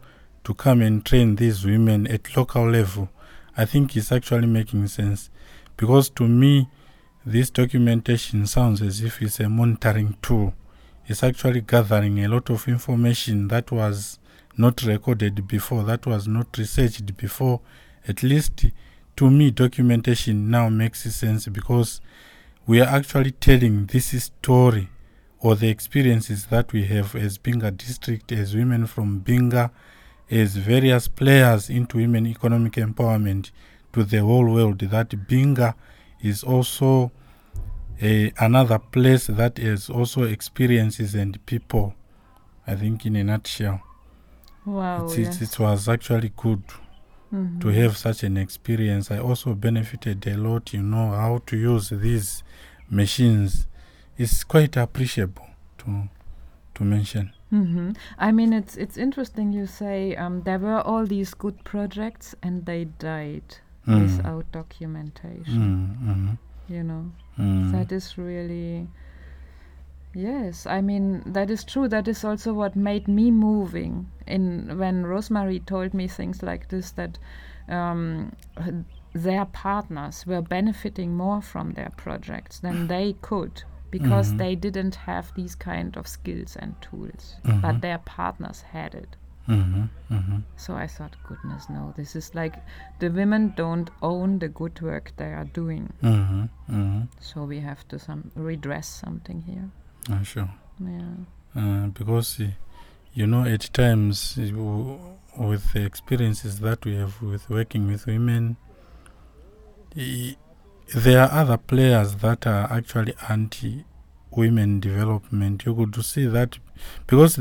Tusimpe, Binga, Zimbabwe - now Binga can speak to the world...
...part of an interview with Anthony Ncube from the Ministry of Women Affairs in Binga. Zubo Trust invited also its local partners and stakeholders to our workshop. Antony participated actively in the training. We recorded this interview during one of the one-to-one training sessions. I asked Antony about the joint work of the Ministry and Zubo Trust and, based on this, of his experience now during the workshop... he beautifully emphasises on the possibilities of listening to the inside ('monitoring') and speaking to the outside, representing Binga and the Tonga people...
a recording made during the one-to-one training sessions of a workshop on documentation skills convened by Zubo Trust; Zubo Trust is a women’s organization bringing women together for self-empowerment.